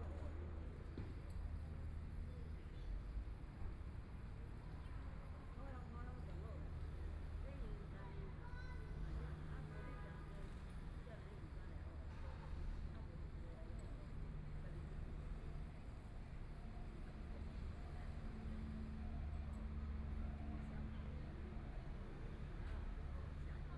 ZhongJi Park, Taipei City - Afternoon sitting in the park
Afternoon sitting in the park, Traffic Sound, Sunny weather
Binaural recordings, Please turn up the volume a little
Zoom H4n+ Soundman OKM II